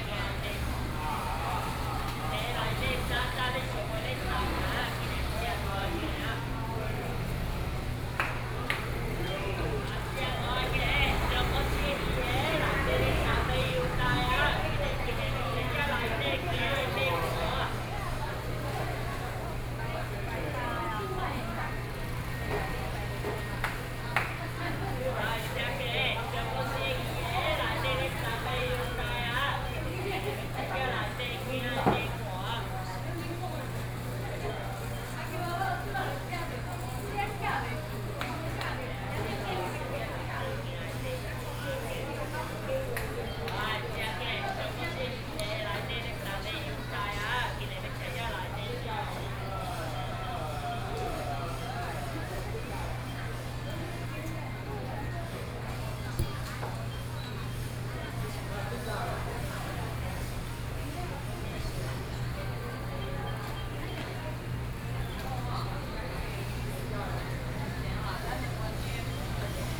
Nanxing St., Yilan City - Traditional Market
Market selling fruits and vegetables, Binaural recordings, Zoom H4n+ Soundman OKM II
Yilan County, Taiwan, 5 November 2013, 09:08